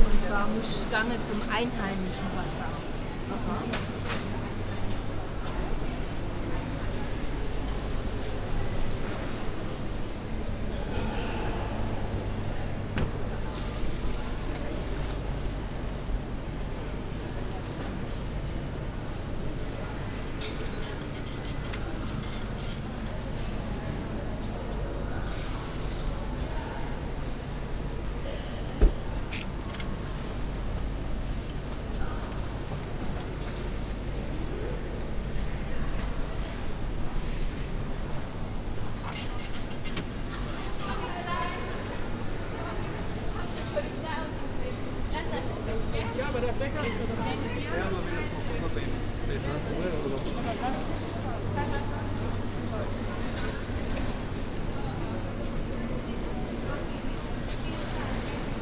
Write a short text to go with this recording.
inside the traditional stuttgart market hall - a walk thru the location, soundmap d - social ambiences and topographic field recordings